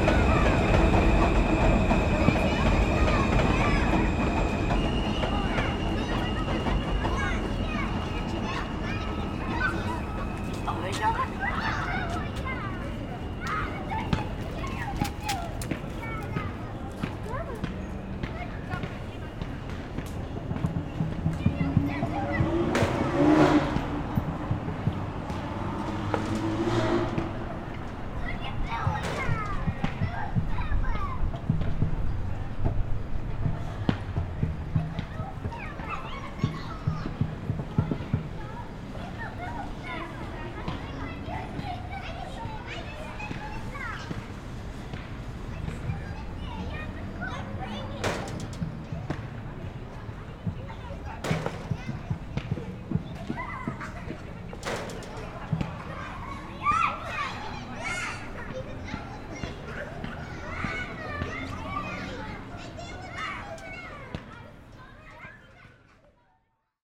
At Rosemary's Playground, Ridgewood, Queens
Kids playing, sounds of bells, traffic, and the M train.
United States